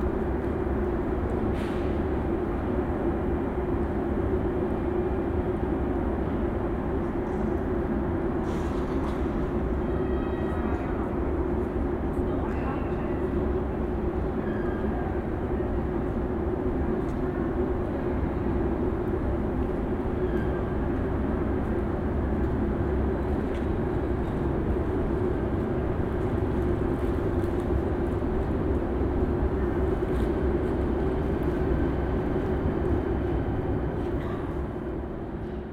Railway station, Praha, Czechia - (84 BI) Nook with a pigeon
Binaural recording of a nook in a railway station. Room tone ambience with a pigeon flying in the middle and some people walking.
Recorded with Soundman OKM on Zoom H2n